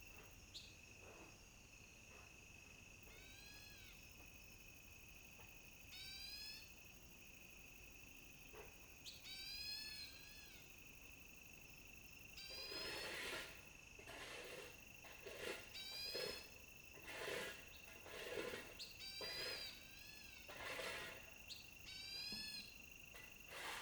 種瓜路, 桃米里 Puli Township - Birds called
Birds called
Zoom H2n MS+ XY
Puli Township, Nantou County, Taiwan, May 2016